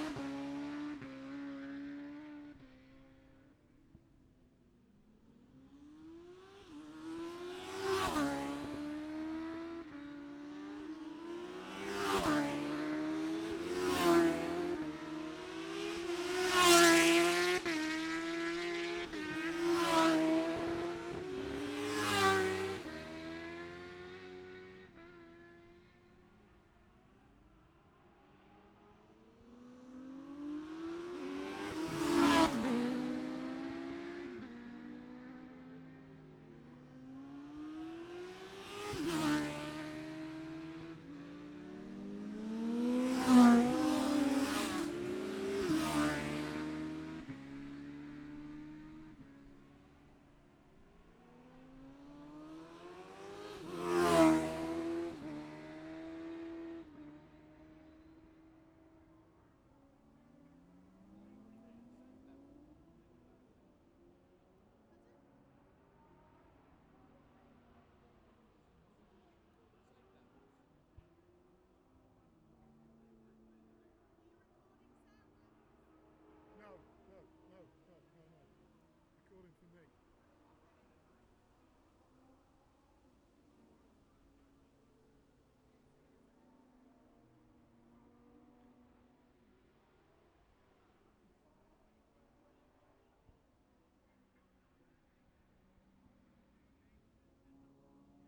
Jacksons Ln, Scarborough, UK - Gold Cup 2020 ...
Gold Cup 2020 ... Classic Superbikes ... Memorial Out ... dpa 4060s to Zoom H5 ...